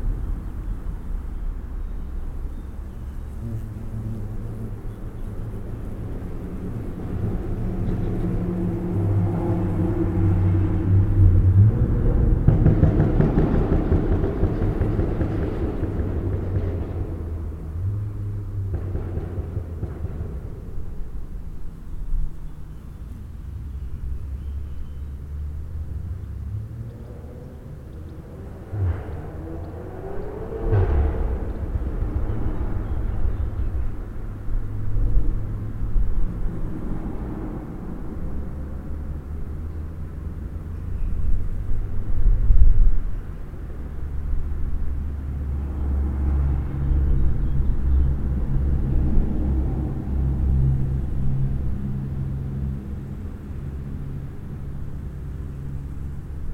Rte d'Aix-les-Bains, Seyssel, France - Sous le pont
Au bord de la Via Rhôna sous le pont suspendu de Seyssel qui enjambe le Rhône, les bruits rythmés du passage des véhicules, quelques sons de la nature . Zoom H4npro posé verticalement les bruits du vent sur la bonnette reste dans des proportions acceptables et manifestent sa présence.
France métropolitaine, France, 17 July, 11:45